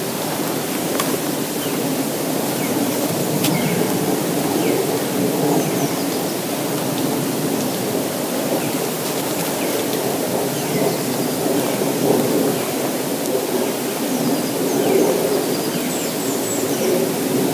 Las Kabacki, Warsaw, Poland - Forest sound
Sunny late winter day. Birds are singing, jets overhead, later a dog (my) can be heard passing.